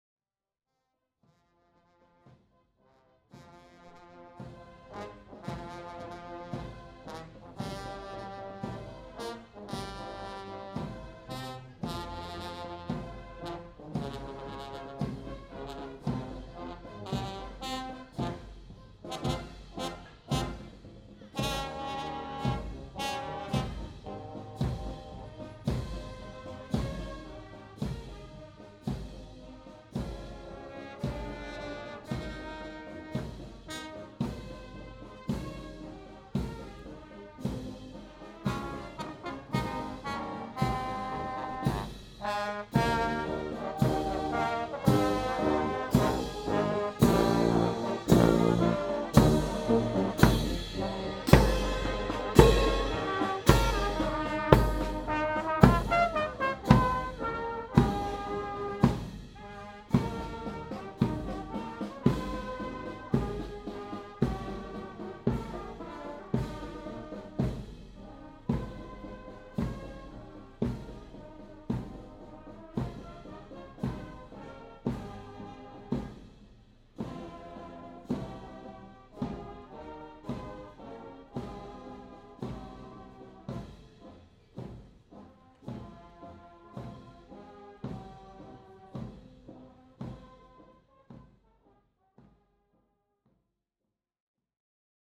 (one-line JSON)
{"title": "Hahndorf Town Band, Hahndorf, South Australia - Hahndorf Marching Band", "date": "2009-05-09 06:37:00", "description": "Battle of the bands in Hahndorf today. I missed the first band to march through but was just in time to catch the home town band. Unfortunately there was only the two bands this year.\nHahndorf lost to Mitcham Town Band by just one point in both the marching and stage judging. Maybe next year.....\nRode NT4 direct into a Sound Devices 702 CF Recorder\nRecorded at 11:30am on 9 May 2009", "latitude": "-35.03", "longitude": "138.81", "altitude": "334", "timezone": "Europe/Berlin"}